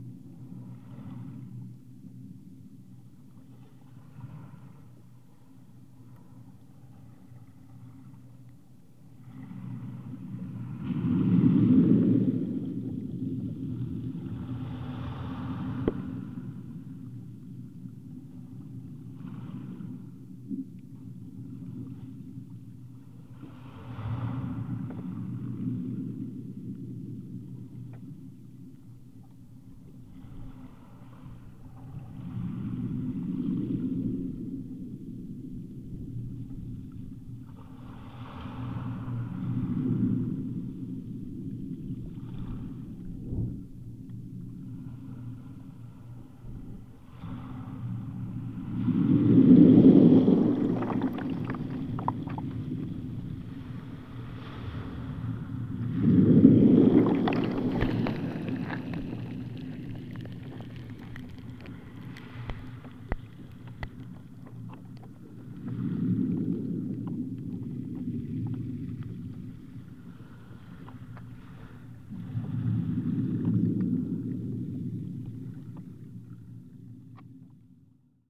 {"title": "Kos, Greece, contacts on seashore", "date": "2016-04-11 14:50:00", "description": "contact microphones in the seashore's stones", "latitude": "36.89", "longitude": "27.29", "altitude": "1", "timezone": "Europe/Athens"}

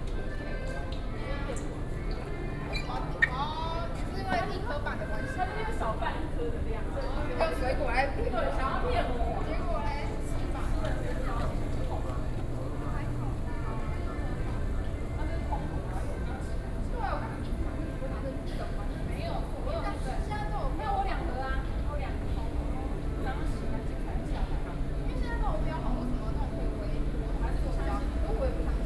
{"title": "Chiang Kai-Shek Memorial Hall Station, Taipei - MRT stations", "date": "2013-06-14 18:43:00", "description": "in the MRT stations, Sony PCM D50 + Soundman OKM II", "latitude": "25.03", "longitude": "121.52", "altitude": "19", "timezone": "Asia/Taipei"}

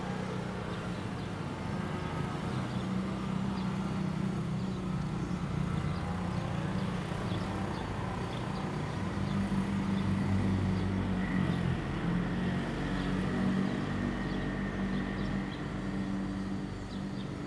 {"title": "赤崁村, Baisha Township - Small village", "date": "2014-10-22 11:37:00", "description": "In the square, in front of the temple, Faced with the village market\nZoom H2n MS+XY", "latitude": "23.67", "longitude": "119.60", "altitude": "11", "timezone": "Asia/Taipei"}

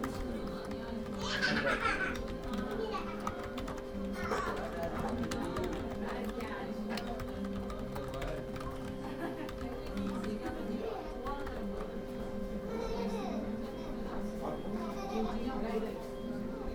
{"title": "varanasi: internet cafe - at the mona lisa cafe", "date": "2008-03-19 01:53:00", "description": "a recording of the mona lisa cafe on the bengali tola - varanasi, march 2008", "latitude": "25.30", "longitude": "83.01", "altitude": "85", "timezone": "Europe/Berlin"}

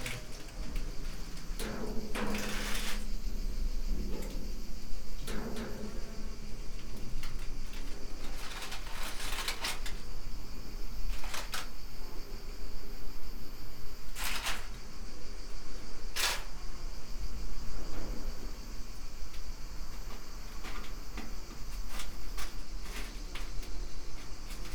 {"title": "quarry, metal shed, Marušići, Croatia - void voices - stony chambers of exploitation - metal shed", "date": "2013-07-19 16:52:00", "description": "trying to quietly step on very dry leaves", "latitude": "45.41", "longitude": "13.74", "altitude": "267", "timezone": "Europe/Zagreb"}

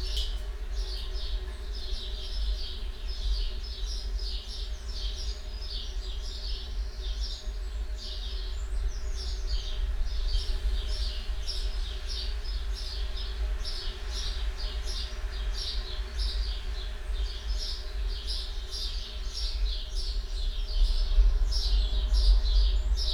{"title": "Luttons, UK - empty water butt ...", "date": "2018-06-16 05:00:00", "description": "empty water butt ... cleaned out water butt previous day ... gale was approaching so suspended lavalier mics inside ... some bangs and clangs and windblast ... bird song and calls from chaffinch ... house sparrow ... blackbird ... wren ... song thrush ...", "latitude": "54.12", "longitude": "-0.54", "altitude": "76", "timezone": "Europe/London"}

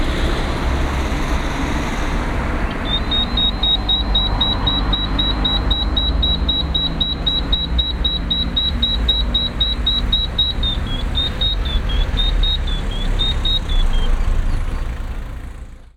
{"title": "Coimbra: in front of railway station B - Pedestrian Crossing Song 2", "date": "2005-06-24 22:21:00", "description": "rising drama as red light is imminent", "latitude": "40.21", "longitude": "-8.43", "altitude": "25", "timezone": "Portugal"}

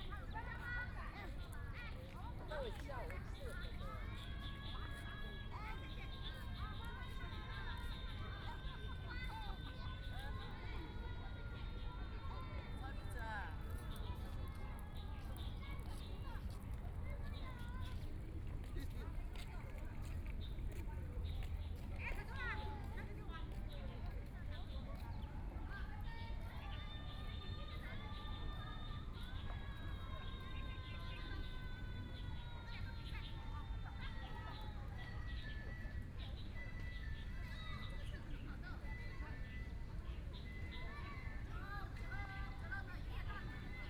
{"title": "Yangpu Park, Shanghai - In the Square", "date": "2013-11-26 11:47:00", "description": "Woman and child on the square, There erhu sound nearby, Binaural recording, Zoom H6+ Soundman OKM II", "latitude": "31.28", "longitude": "121.53", "altitude": "5", "timezone": "Asia/Shanghai"}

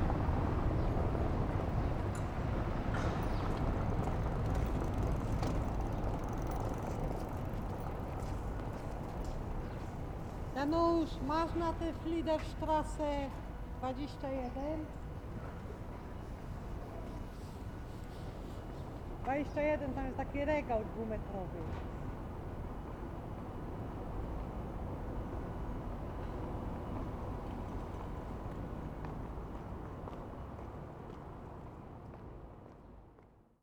{"title": "Berlin: Vermessungspunkt Friedel- / Pflügerstraße - Klangvermessung Kreuzkölln ::: 22.09.2010 ::: 10:25", "date": "2010-09-22 10:25:00", "latitude": "52.49", "longitude": "13.43", "altitude": "40", "timezone": "Europe/Berlin"}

